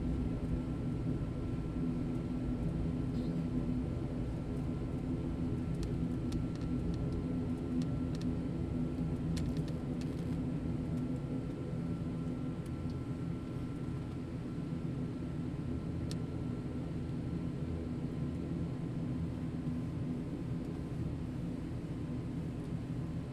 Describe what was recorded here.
AMBIENTE INTERIOR AVION ATR 42 RECORRIDO PISTA Y DESPEGUE, GRABACIÓN STEREO X/Y TASCAM DR-40. GRABADO POR JOSE LUIS MANTILLA GOMEZ.